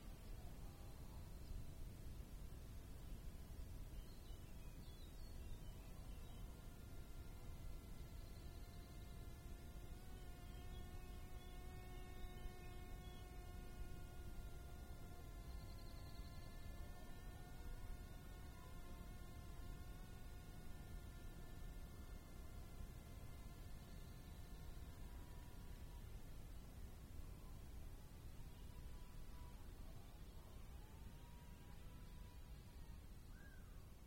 Spitaki, Aloni, Mikro Papingo - Bombilyius Major vrs stridulations and strimmers
Bombilyius Major - what a wonderful name - also known as Large Bee Fly is a bee mimic; he has a long probyscus and hums happily whilst drinking nektar. Today on our threshing floor (Aloni) on a pleasant (25C) sunny day with a slight breeze he was in chorus with a stridulating Acrida mediterranea (grasshopper) -please can someone confirm spcies and activity - and strimmer (Apostolis with his Stihl). It seems as if they all try to tune to each other and sing in harmony.
This was recorded using a Phonak lapel mic with low pass filter, mounted on a selfie stick with a mini Rycote windgag to an Olympus LS 14. Edited simply in Audacity: selection of fragment, fade i/o